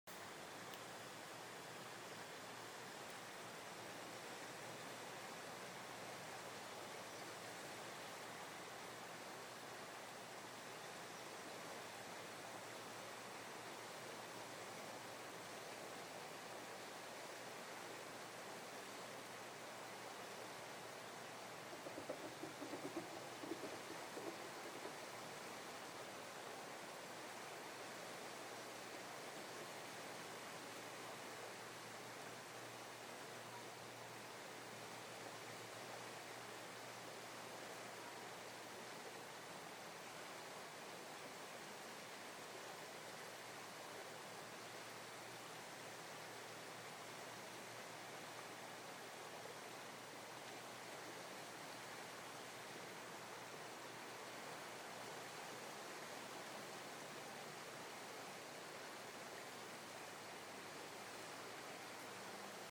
Golden, South Tipperary, Co. Tipperary, Ireland - River Suir Movement at Golden
Sounding Lines is a visual art project by Claire Halpin and Maree Hensey which intends to encourage participants to navigate and encounter the River Suir in an innovative and unexpected way. Communities will experience a heightened awareness and reverence for the river as a unique historical, cultural and ecological natural resource. It is designed to take you places you might not otherwise go, to see familiar places in a new light and encourage a strong connectivity to the River Suir through sound and visuals.
This project was commissioned by South Tipperary County Council Arts Service and forms part of an INTERREG IVB programme entitled Green and Blue Futures. South Tipperary County Council is one of the partners of this European Partnership Project.
29 October 2013, 14:28